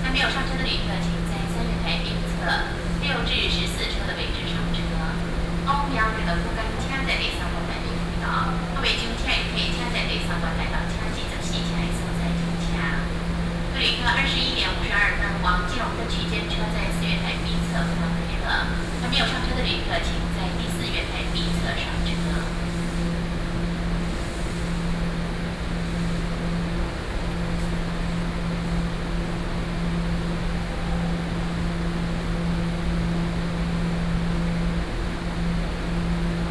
Railway platforms, Train traveling through, Sony PCM D50 + Soundman OKM II